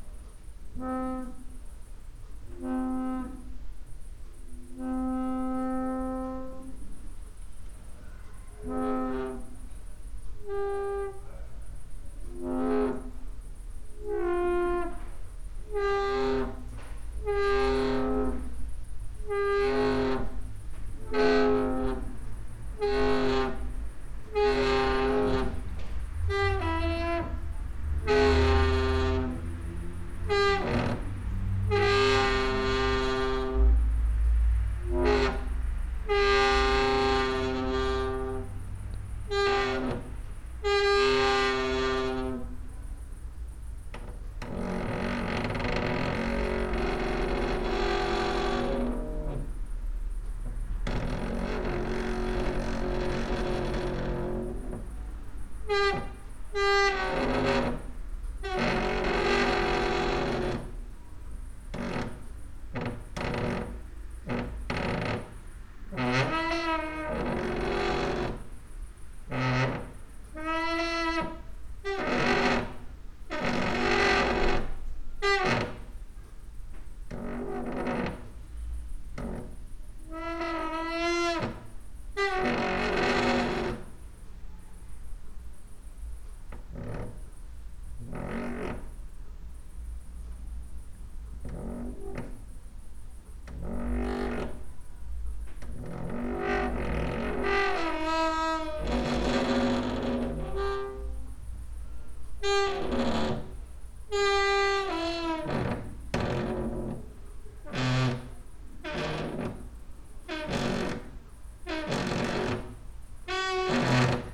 just like doors were not really in the mood ... but cricket did not mind
Maribor, Slovenia, August 2013